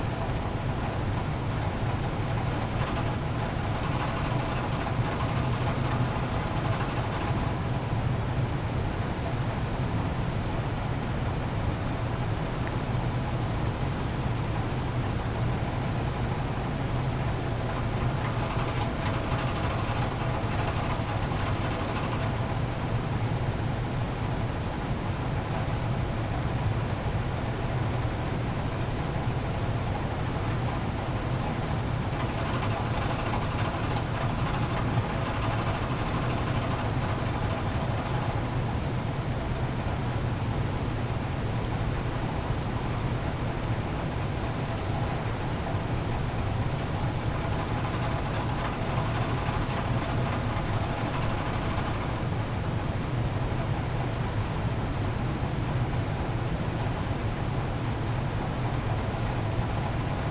ventilation shaft from an oil shale mine 70+ meters below
Ida-Virumaa, Estonia, July 2010